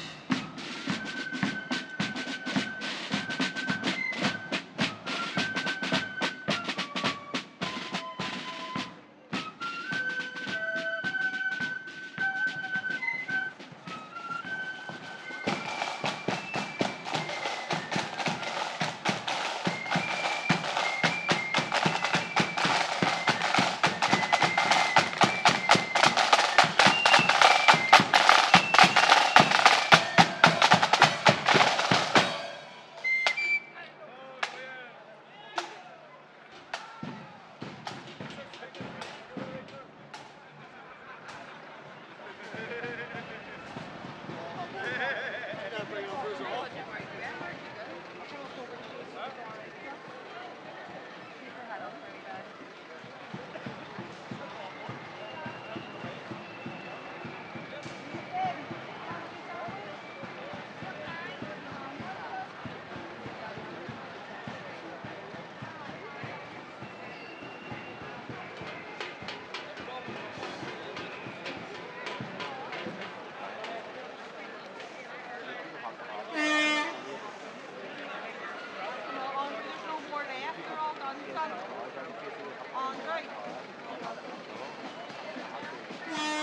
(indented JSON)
{
  "title": "Ulsterville Ave, Belfast, UK - 2022-07-12-Belfast Orange Day Parade-Lifted Restrictions",
  "date": "2022-07-12 11:25:00",
  "description": "Due to the Covid-19 pandemic, the Orange Day parades were previously restricted in size and attendance. In 2022, the parade was back on in pre-covid conditions, meaning a full day of marching and celebration. The recordings took place such as the recording position of last year and the return route marching was recorded within a flat less than 300m from Lisburn Road. The recordings are separated into four sections to best time compress the activities taking place during the march, Section 1 – beginning of marching, Section 2 – marching break, Section 3 – continued marching, and Section 4 – return route marching through the perspective on window listening. Recording of Full Parade, Return Parade, marching, viewers, helicopters, drums, whistles, flutes, accordions, vehicles, chatter, celebration, bottles, drinking, smoking, and stalled vehicles.",
  "latitude": "54.58",
  "longitude": "-5.94",
  "altitude": "21",
  "timezone": "Europe/London"
}